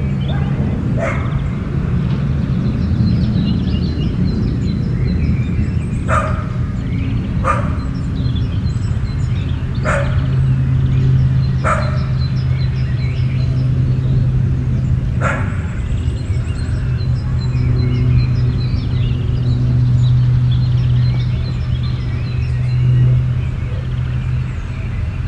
Arona, dogs, birds, cars and plane
Arona, Italy, 22 March 2010. Couple of dogs are barking, several birds singing in background.
PIE, Italia